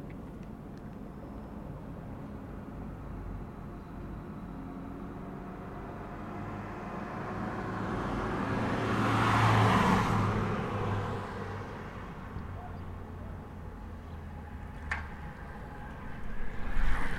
Rte d'Aix les Bains, Cusy, France - Centre Cusy
La circulation dans Cusy zone 30km/h, voitures thermiques, hybride, camion, motos, vélo, vélo électrique, toujours quelques moineaux pour piailler.